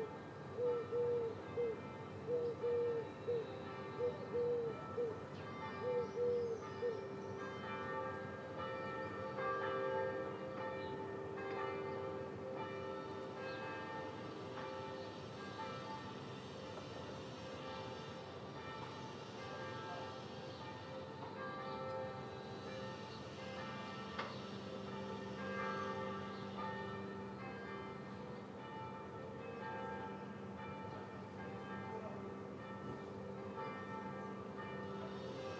Chemin des Sablons, La Rochelle, France - semi distant bell tower and resumption of construction site this morning
semi distant bell tower and resumption of construction site this morning
ORTF DPA4022 + Rycote + Mix 2000 AETA + edirol R4Pro